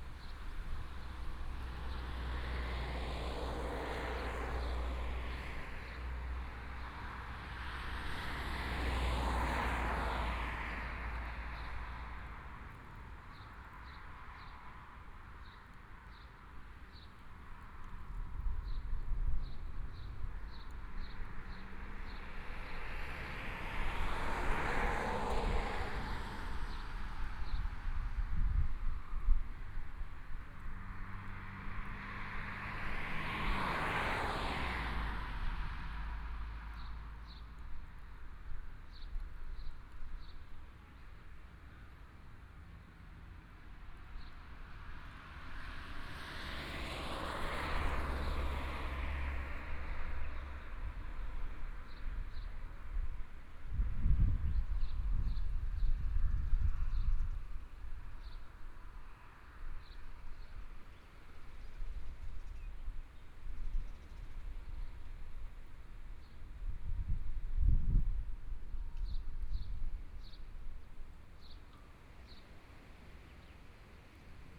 At the roadside, Traffic Sound
Germany, 11 May 2014, ~16:00